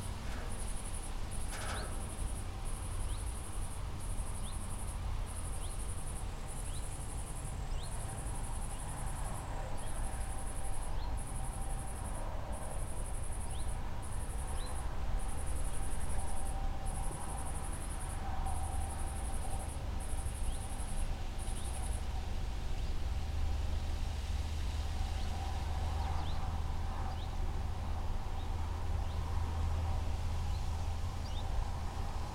the building of abandoned factory (soviet era relict). echoes of traffic, winds and... ages
10 September 2022, ~18:00